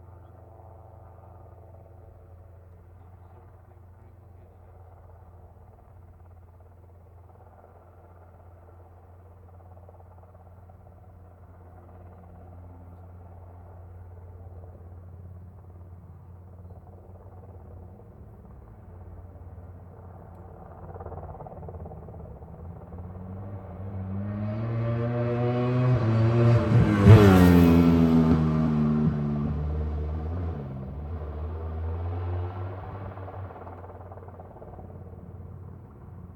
world superbikes 2004 ... superbikes superpole ... one point stereo mic to minidisk ...
Longfield, UK, 31 July, 16:00